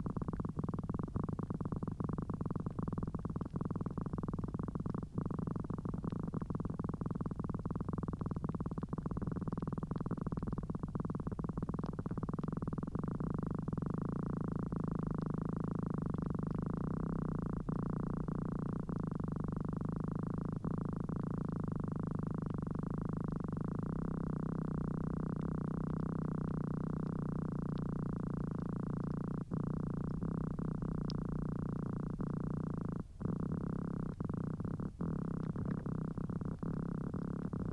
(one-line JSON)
{"title": "Hibiscus Rosa Sinensis, Botanical Gardens", "date": "2011-07-09 16:08:00", "latitude": "56.95", "longitude": "24.06", "altitude": "12", "timezone": "Europe/Riga"}